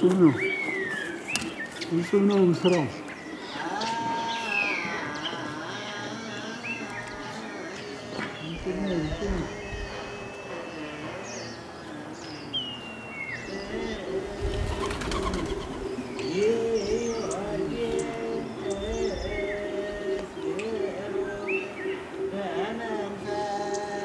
Fortress of Kusquam/Qusquam, Gonder, Ethiopia - Lone man singing at Qusquam in Gondar, Ethiopia
In this noisy recording, we find a lone man singing to himself outside the Church of St. Mary, which lies within the Qusquam fortress complex in Gondar, Ethiopia.
North Gonder, አማራ ክልል, ኢ.ፌ.ዲ.ሪ., 8 February